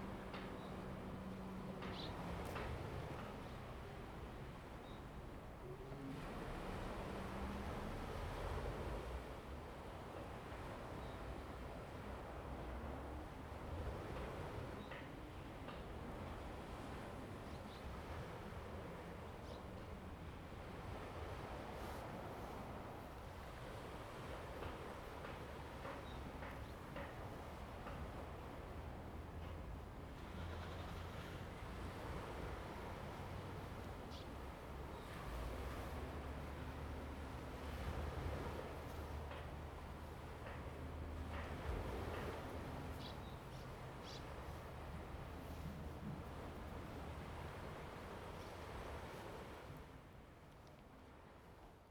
嘉和, Jiahe, Fangshan Township - In the village of Haiti
In the village of Haiti, traffic sound, Sound of the waves, birds sound
Zoom H2N MS+ XY